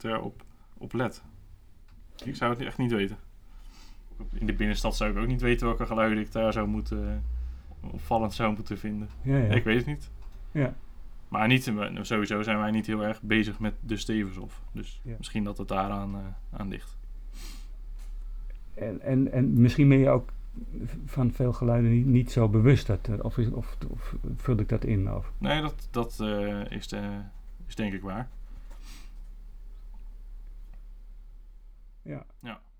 Henk Jan vertelt over geluiden van de Stevenshof
Henk Jan luistert naar de geluiden van molen en vertelt over de geluiden in zijn omgeving
Leiden, The Netherlands, 10 September, 15:07